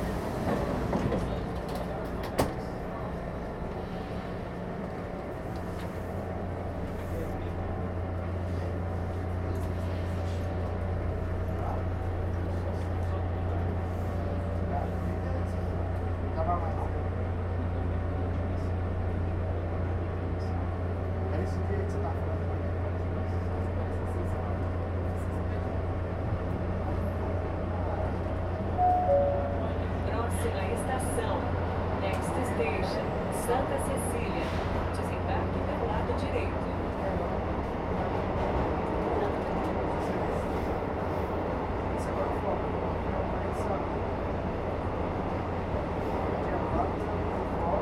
Pedro II - Brás, São Paulo - SP, 03216-050, Brasil - interior vagão de metrô de são paulo
captação estéreo com microfones internos